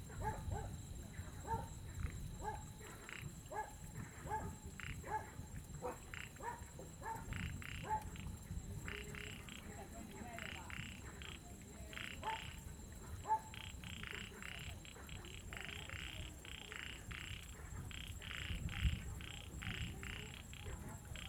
都蘭村, Donghe Township - Frogs and Dogs
Thunder, Frogs sound, Dogs barking, Mountain road at night
Zoom H2n MS+XY